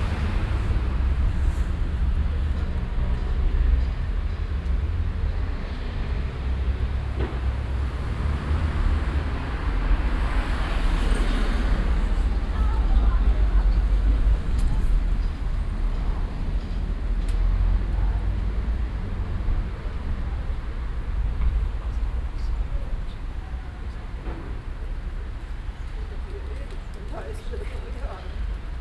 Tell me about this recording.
gastronomie mit tischen auf der strasse, gesprächsfetzen, die küchenklingel, verkehr, soundmap nrw - social ambiences - sound in public spaces - in & outdoor nearfield recordings